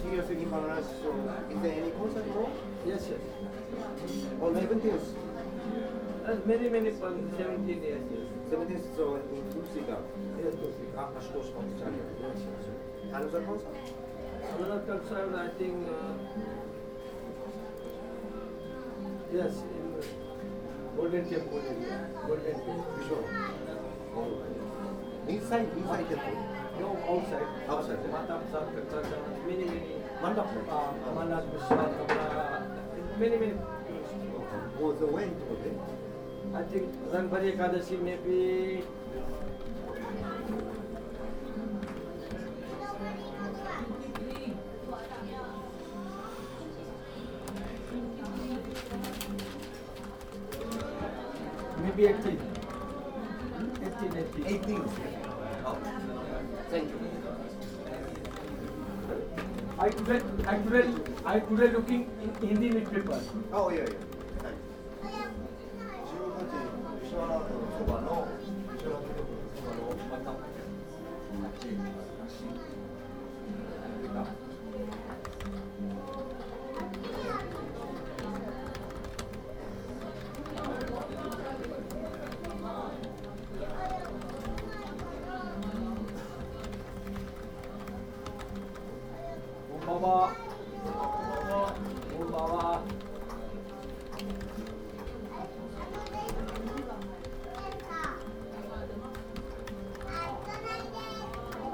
varanasi: internet cafe - at the mona lisa cafe
a recording of the mona lisa cafe on the bengali tola - varanasi, march 2008
uttar pradesh, india